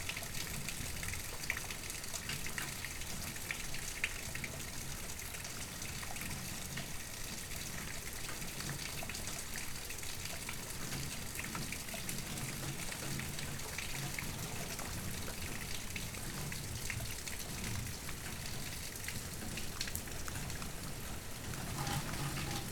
Waters Edge - Severe Warned Storm Part 2
A line of severe warned storms came across the metro in the evening which put us under a Sever Thunderstorm warning and a Tornado warning for the adjacent county. The outdoor warning sirens can be heard early in the recording for the Severe Thunderstorm warning and then later from the adjacent county for the tornado warning. Rainfall rates at the beginning of the storm were measured by my weather station at 8.6 inches per hour and we got about 1.25 inches in a half hour. Luckily we didn't get much wind so there was no damage.
Washington County, Minnesota, United States, 11 May 2022, ~22:00